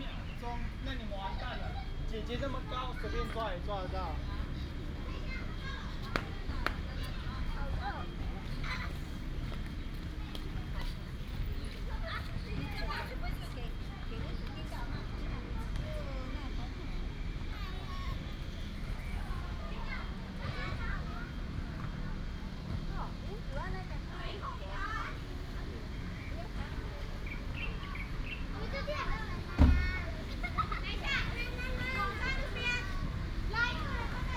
文昌休閒公園, Bade Dist., Taoyuan City - Children play with their father
in the park, Children's play area, Children play with their father, Birds, traffic sound
Taoyuan City, Taiwan, 5 July 2017